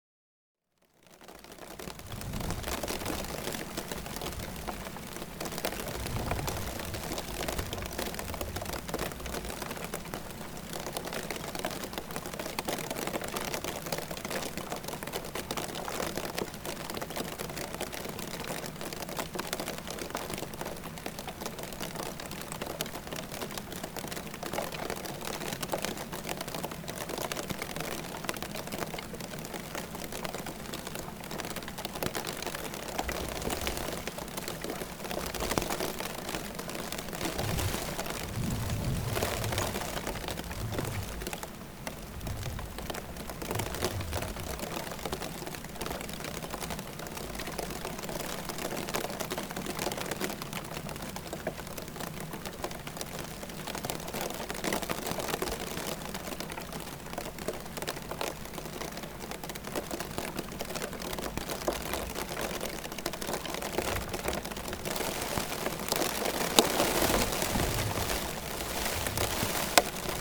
Snow Flakes Falling, Malvern, Worcestershire, UK - Snow Flakes Falling
Hard to believe but this is a brief snow shower falling onto a metal plate 400mmx400mm with a contact microphone glued to its surface. The location is Vernon's Meadow. Recorded on a MixPre 6 II.